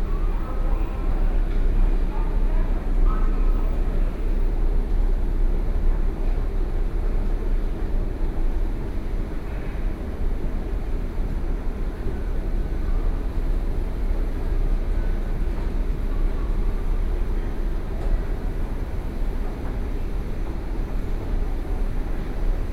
Dongmen - in the MRT Station